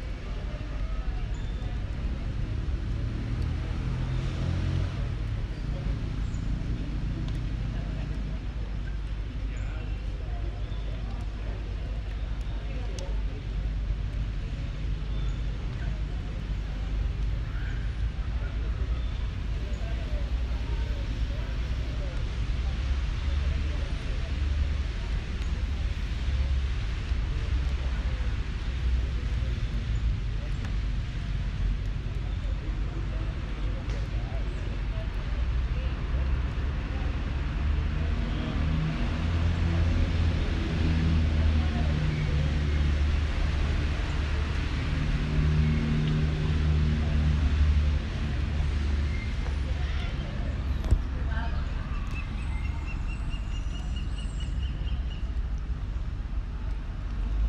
Cra., Medellín, Antioquia, Colombia - Ambiente Lluvioso
Información Geoespacial
(latitude: 6.233785, longitude: -75.603743)
Afuera del Centro Comercial Los Molinos
Descripción
Sonido Tónico: Lluvia
Señal Sonora: Gente hablando
Micrófono dinámico
Altura 1.50
Duración: 3:00